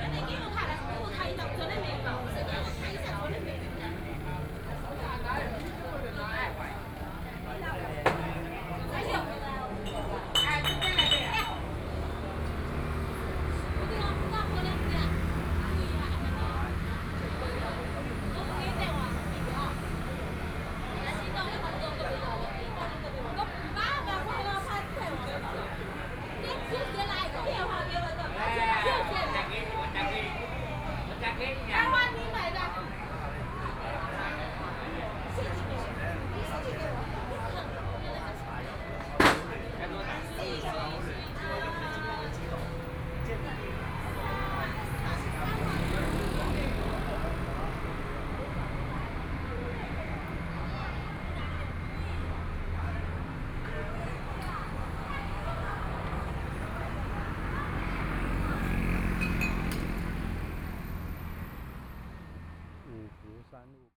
前金區博孝里, Kaoshiung City - In the restaurant
In the restaurant, Traffic Sound